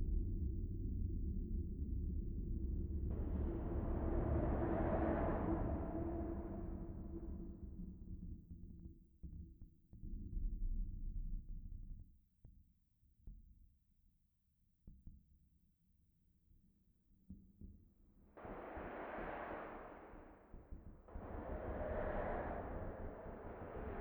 Altstadt, Düsseldorf, Deutschland - Düsseldorf, Bridge Oberkassel, bridge abutment
Inside the bridge abutment of the Oberkasseler bridge. The sounds of car traffic and trams passing by and reverbing in the inner bridge hall.
This recording is part of the exhibition project - sonic states
soundmap nrw - topographic field recordings, social ambiences and art places